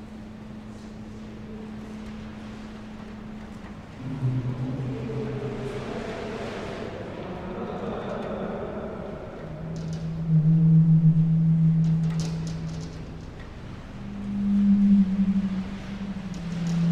sonic sofa feedback, Muggenhof/Nürnberg
feedback sounds from the electrified sonic sofa installation
Nuremberg, Germany, 12 April, ~21:00